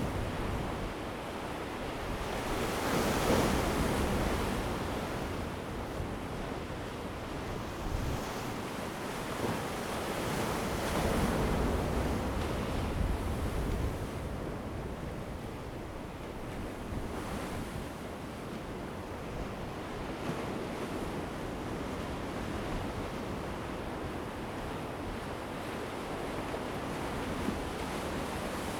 和平里, Chenggong Township - In the wind Dibian
In the wind Dibian, Sound of the waves, Very hot weather
Zoom H2n MS+ XY
Taitung County, Taiwan, September 2014